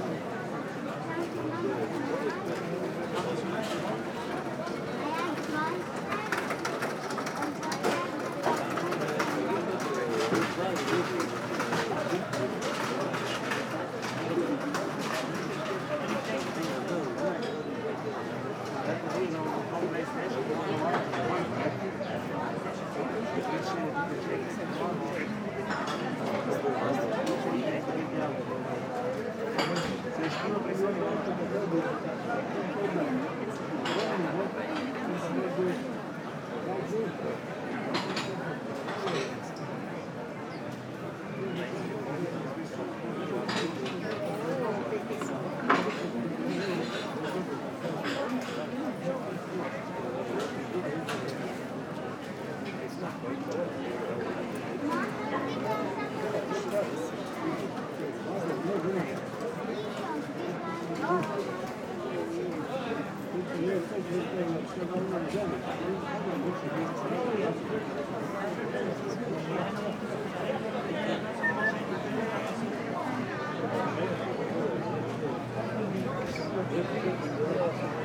Maribor, Vodnikov Trg, market - saturday market
two gentleman discussing television cables and what one of them ate at the party last night - schnitzel, potato and salad ...